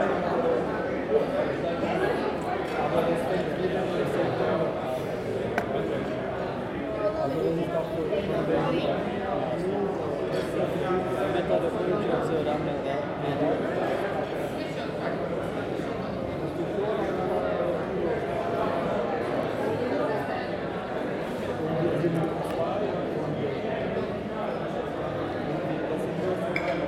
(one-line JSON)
{"title": "Politických vězňů, Nové Město, Česko - Ambience in Kantýna restaurant", "date": "2019-07-05 18:34:00", "description": "Busy afternoon in Kantýna restaurant/cantine. People eating, chatting. Dishes and cutlery.\nZoom H2n, 2CH, on table.", "latitude": "50.08", "longitude": "14.43", "altitude": "210", "timezone": "Europe/Prague"}